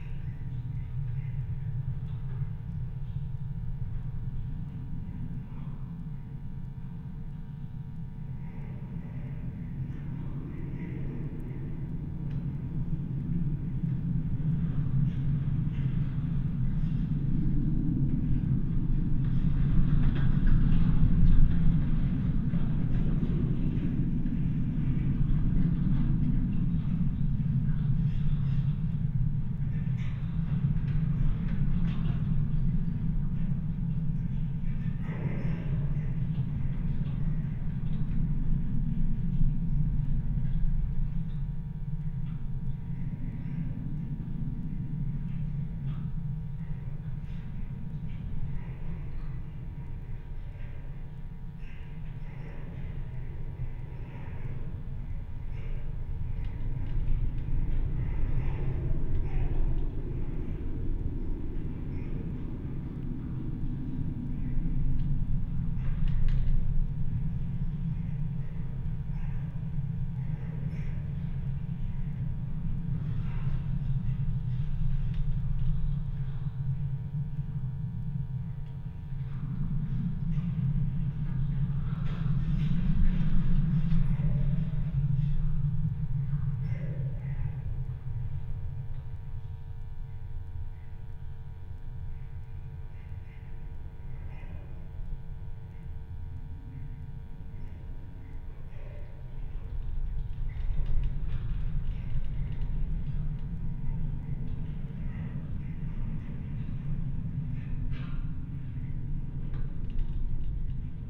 Utena, Lithuania, fence/wind
warehouse fence. contact microphone + electromagnetic antenna.
1 November, 17:20